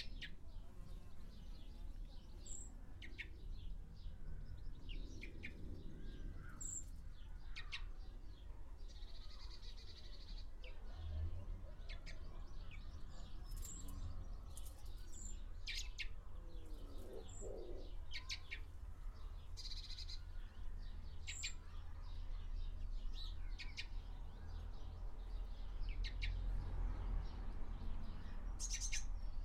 in the Forest Garden - blackbird chicks, school music session
blackbirds feed their demanding babies, children in the primary school next door bring their music lesson outside, cars drive past faster than the speed limit permits.